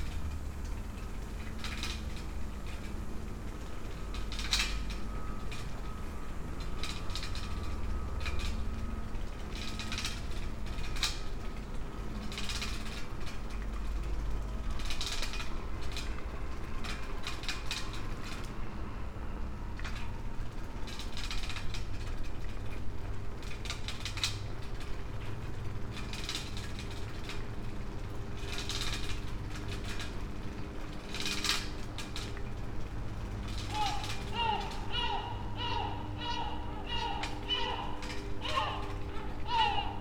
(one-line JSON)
{"title": "Novigrad, Croatia - meanwhile small town by the sea is still breathing air of dreams", "date": "2013-07-18 04:54:00", "description": "ride and walk at dawn, streets sonic scape with seagulls and air conditioners, pine trees and sea side sounds at the time, when light is purple blue, thousands of birds overnighting on old pine trees", "latitude": "45.32", "longitude": "13.56", "altitude": "5", "timezone": "Europe/Zagreb"}